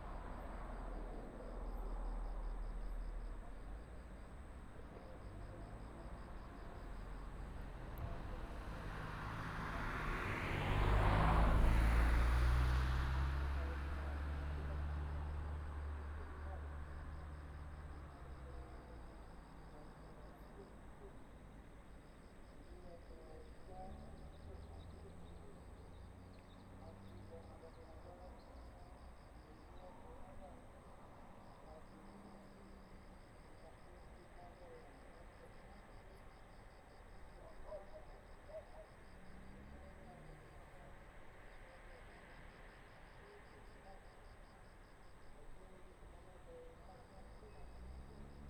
Mudan Township, Pingtung County - Evening in the mountain road
Evening in the mountain road, Traffic sound, Dog barking, Village Broadcasting Message